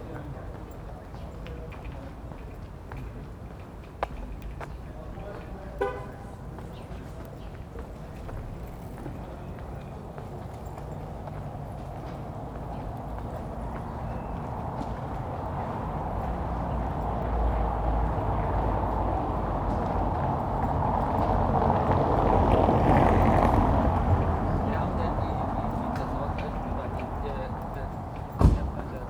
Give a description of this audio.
Extract 1: Moving from the station into residential streets. The 5 Pankow Soundwalks project took place during spring 2019. April 27 2020 was the first anniversary of walk1. So I walked the same route in celebration starting at Pankow S&U Bahnhof at the same time. The coronavirus lockdown has caused some changes. Almost no planes are flying (this route is directly under the flight path into Tegel Airport), the traffic reduced, although not by so much and the children's playgrounds are closed. Locally these make quite a difference. Five extracts of the walk recording can be found on aporee.